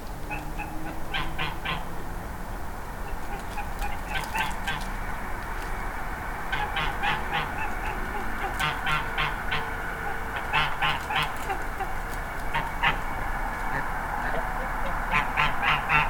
Geese eating midnight snack, train passing, Zoom H4n Pro
Vlaanderen, België - Belgique - Belgien, 27 May 2020